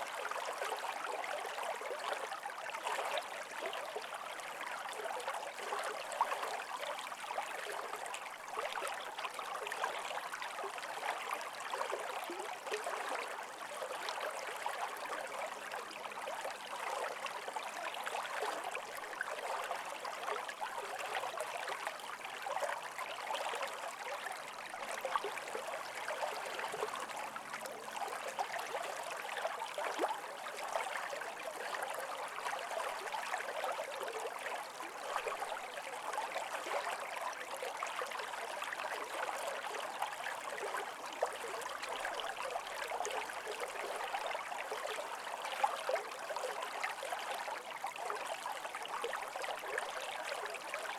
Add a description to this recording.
spring stream downhill ancient Voruta mound